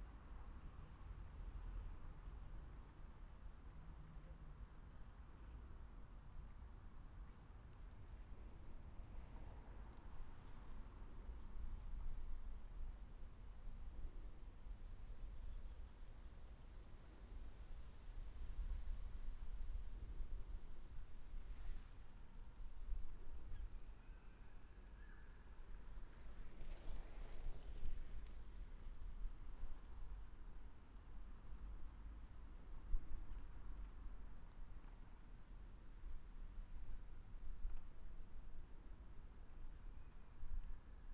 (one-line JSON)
{"title": "Erfurt, Stadion Ost, Erfurt, Germany - Erfurt, Stadion Ost Tram stop ambience", "date": "2020-07-27 18:15:00", "description": "soft, sharp, and fast movements, gentle winds.\nRecording gear: LOM MikroUsi Pro, Zoom F4 Field Recorder.", "latitude": "50.96", "longitude": "11.04", "altitude": "218", "timezone": "Europe/Berlin"}